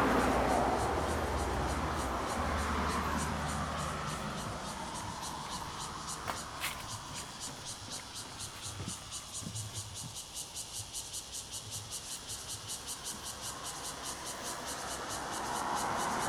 Donghe Township, Taitung County - Cicadas and Traffic Sound
Traffic Sound, Cicadas sound
Zoom H2n MS +XY